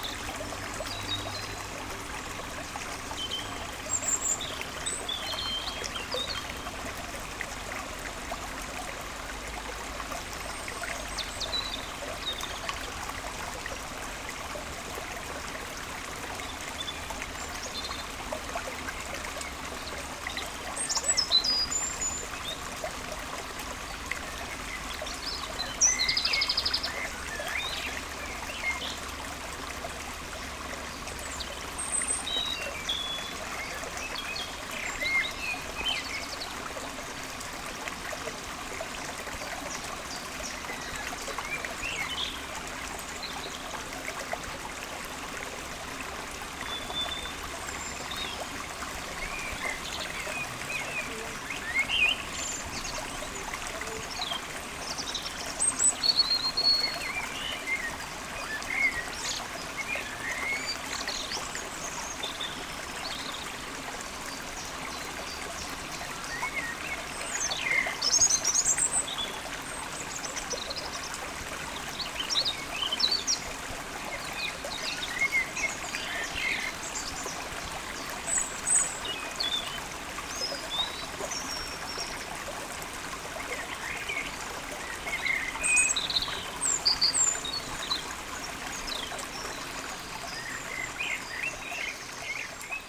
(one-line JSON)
{"title": "Walhain, Belgique - The river Orne", "date": "2016-04-10 16:20:00", "description": "Recording of the river Orne, in a pastoral scenery. Confluence with the Sart stream. Nervous troglodyte in the trees. Recorded with Lu-Hd binaural microphones.", "latitude": "50.63", "longitude": "4.63", "altitude": "104", "timezone": "Europe/Brussels"}